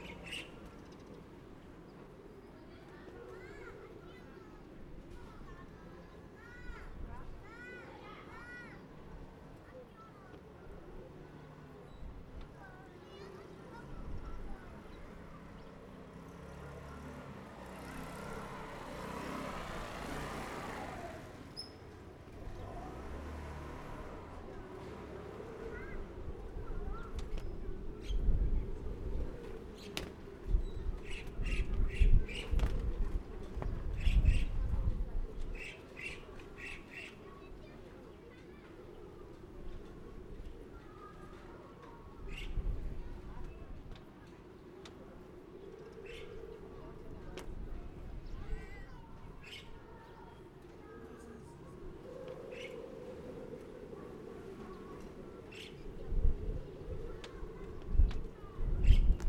The sound of the wind, On the streets of a small village
Zoom H6 MS
Fangyuan Township, Changhua County, Taiwan